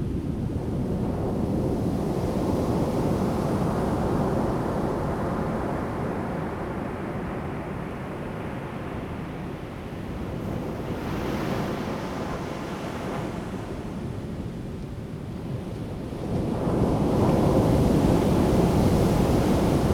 太麻里海岸, Taitung County, Taiwan - on the beach
Sound of the waves, on the beach
Zoom H2n MS+XY